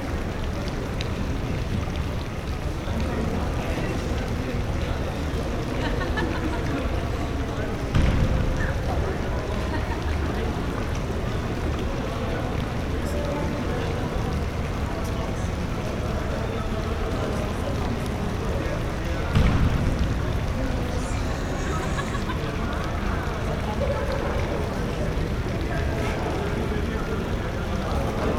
ITU architecture school courtyard, Istanbul
large open courtyard at the Architecture faculty of Istanbul Technical University